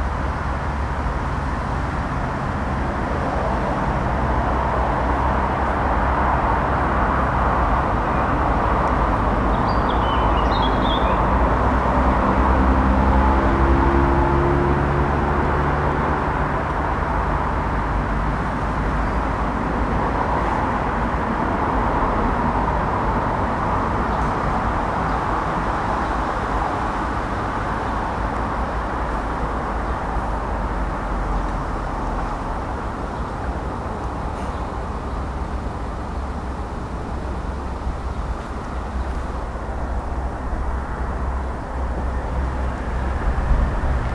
Limerick City, Co. Limerick, Ireland - Ted Russel Park
road traffic noise from Condell Road, industrial noise from across River Shannon, birds